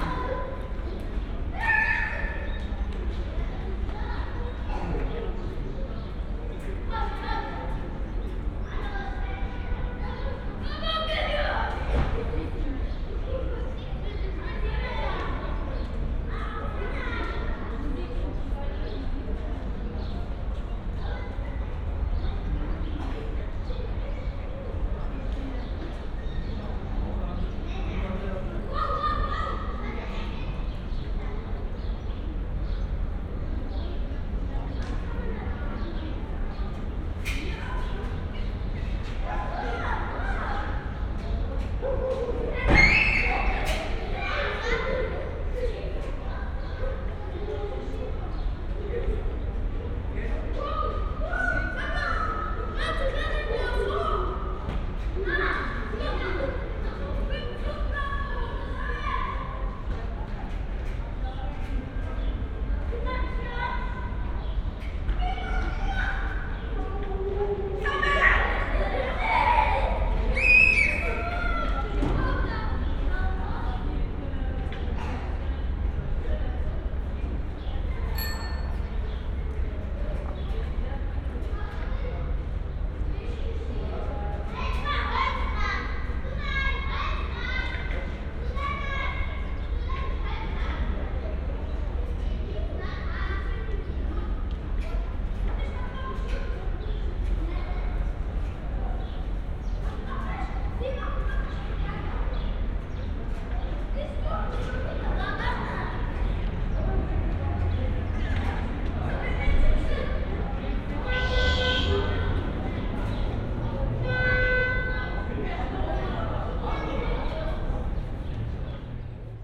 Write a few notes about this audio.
inner yard at Centrum Kreuzberg, Berlin, cold spring evening, ambience, (tech: Olympus LS5 + Primo EM172 set)